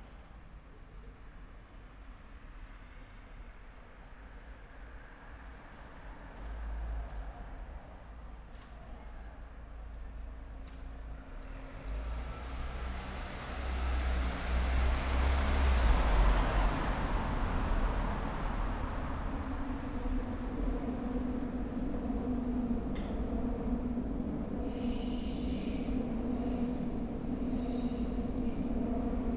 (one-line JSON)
{"title": "cologne, marzellenstr-eigelstein, unterführung - train underpass", "date": "2019-09-09 22:20:00", "description": "Köln, Eigelstein, various traffic: pedestrians, cyclists, cars, trains, heavy drumming from trains above\n(Sony PCM D50, Primo EM172)", "latitude": "50.95", "longitude": "6.96", "altitude": "54", "timezone": "Europe/Berlin"}